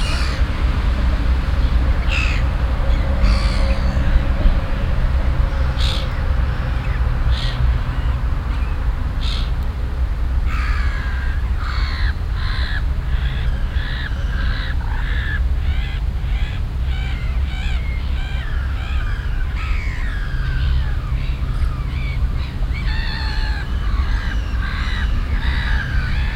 Mittags am Seeufer der Landskrone - dichtes Treiben des Seegefieders, Möwen, Enten, Schwäne, Perlhühner - das Rauschen des Strassenverkehrs
soundmap nrw: social ambiences/ listen to the people - in & outdoor nearfield recordings
21 August, 10:03